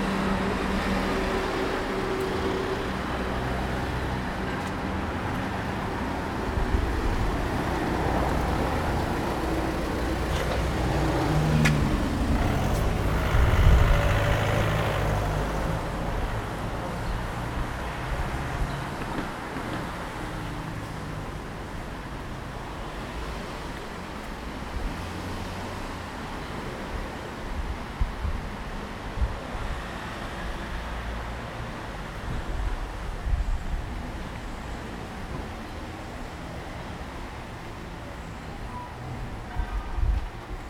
Friedrichspl., Mannheim, Deutschland - Kasimir Malewitsch walk, eight red rectangles
traffic, construction site noise Kunsthalle Mannheim
Mannheim, Germany, 31 July 2017